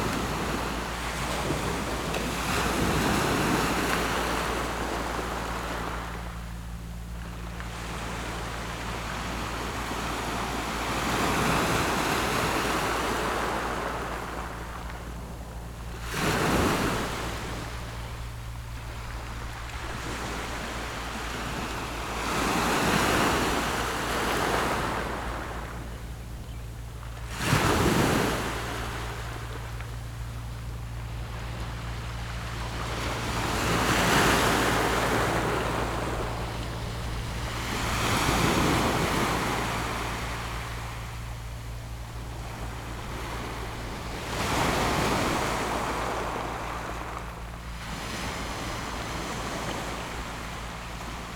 Su'ao Township, Yilan County - Sound of the waves
Sound of the waves, In the coastal
Zoom H6 MS+ Rode NT4